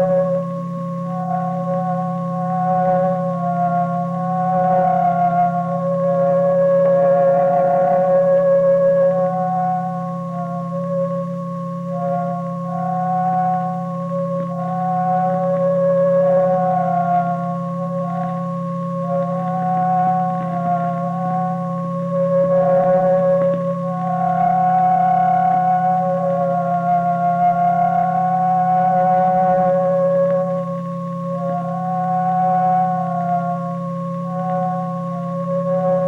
Hynningen - Hynningen - by Werner Nekes, Soundtrack Anthony Moore 1973
Fragment aus dem Soundtrack von Hynningen, 1973, einem Film von Werner Nekes, Musik von Anthony Moore.
Hynningen ist Teil von Diwan, fünf eigenständigen Filmen über Landschaft, einsame Häuser und ihre Bewohner.
Aus einer E-mail von W.Nekes:
"Also Hynningen ist der Name des
Hauses (alte Schreibweise Honungstakan = Honigdach übersetzt). Viele
allein liegende Häuser und Gehöfte tragen einen eigenen Namen, in der
Nähe sind mehrere Häuser zusammengefaßt unter dem Namen Tegen. Tegen
wird unter Sillerud erkannt und insgesamt gehört es postalisch zur
Gemeinde 67200 Årjäng, nah der Norwegischen Grenze auf der Höhe Karlstad-Oslo. beste Grüße Werner"
Gemeinde 67200 Årjäng, Schweden